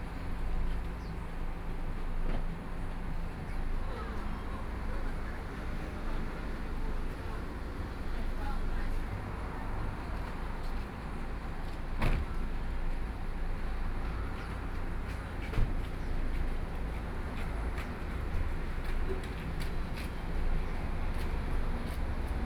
樂合里, Yuli Township - In front of the convenience store
In front of the convenience store, Tourists, Traffic Sound